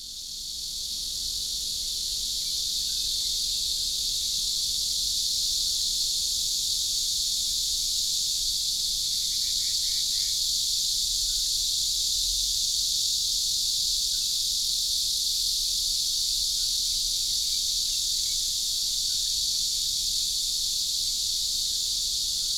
中路復育公園, Taoyuan Dist. - under the tree
Cicadas and birds, under the tree